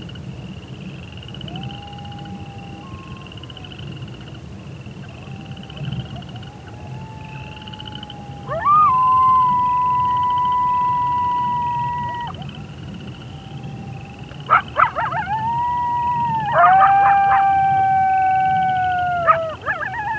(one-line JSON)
{"title": "Tall Grass Prairie - A coyote is howling during the night, in the Tall Grass Prairie, Oklahoma, USA", "date": "2013-05-10 22:00:00", "description": "A coyote yelling when a plane is passing by, during the night, in the Tall Grass Prairie. Some cricket are singing too. Sound recorded by a MS setup Schoeps CCM41+CCM8 Sound Devices 788T recorder with CL8 MS is encoded in STEREO Left-Right recorded in may 2013 in Oklahoma, USA.", "latitude": "36.71", "longitude": "-96.39", "altitude": "266", "timezone": "America/Chicago"}